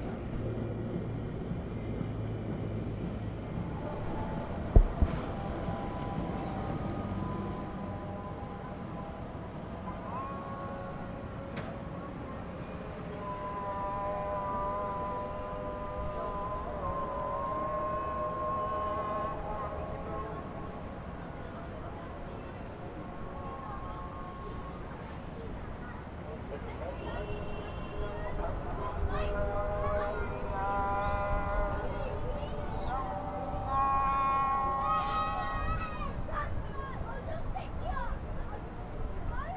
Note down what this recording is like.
Old city and east Jerusalem from rooftop restaurant of Notre Dame center at sunset.